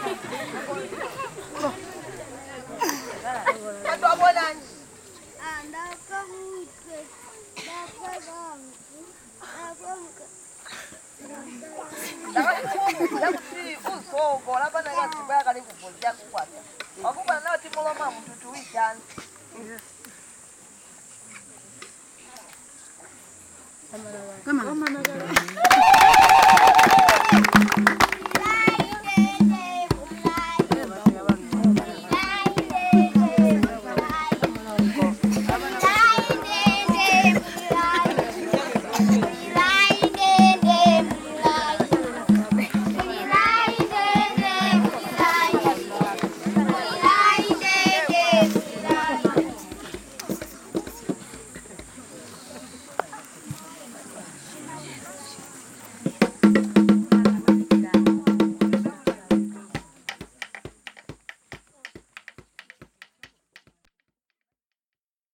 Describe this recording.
…little drama plays and more singing and dancing…